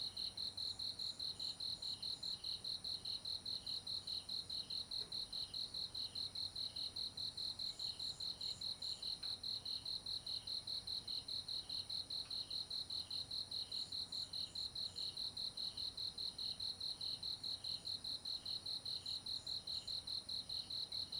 埔里鎮桃米里水上巷3-3, Taiwan - Insects sounds
Insects sounds
Zoom H2n Spatial audio